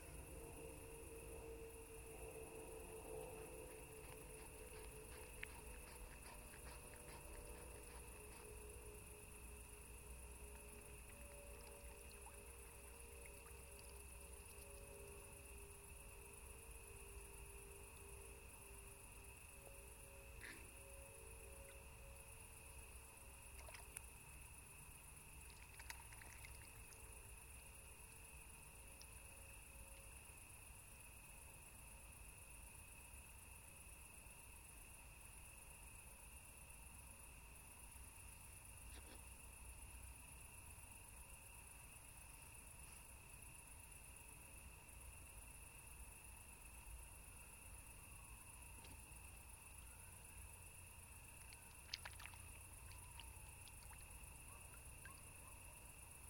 Hlubocepy Lake and swans
HluboÄepy Lake at night. 5 min. walk from the railway bridge. Two swans are begging for a piece of bread and hissing to threaten me. Crickets and cicades chirping as if we are somewhere in The Carpatian Mountains. The 18 meter deep little lake created in 1907 strong watersource in the stone quarry. One of the best places in Prague for swimming.
Prague-Prague, Czech Republic, 28 August 2008